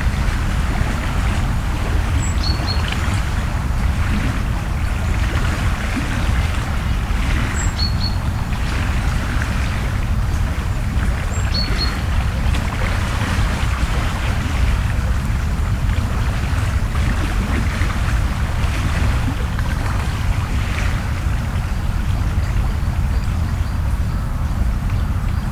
Niévroz, Rhône river
Near the Rhône river, heavy torrent.
Niévroz, France, 16 September 2006